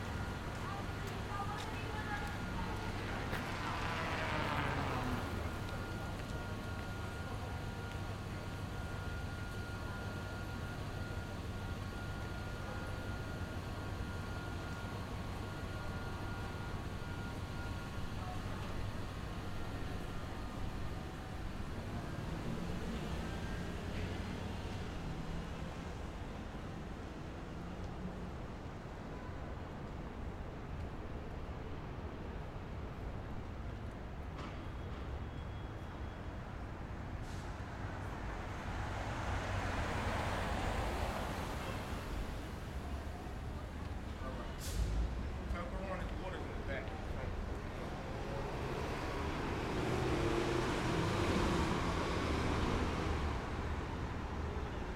Catalpa Avenue, Ridgewood: Church bells and street sounds.